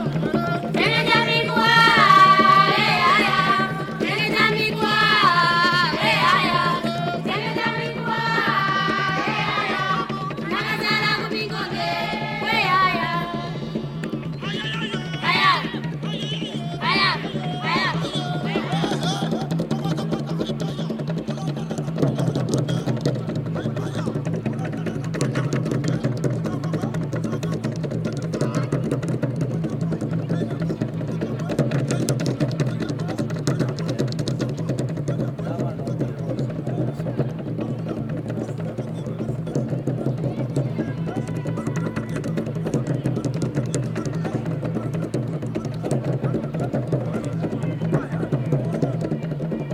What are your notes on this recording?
Imagine 260 young people in intense movement in the empty stadium, drummers, contemporary and traditional dancers, acrobats, magicians…. You are listening to a bin-aural soundscape-recording of the Zambia Popular Theatre Alliance (ZAPOTA) rehearing for the opening of the Zone 6 Youth Sports Games… The complete playlist of ZAPOTA rehearsing is archived here: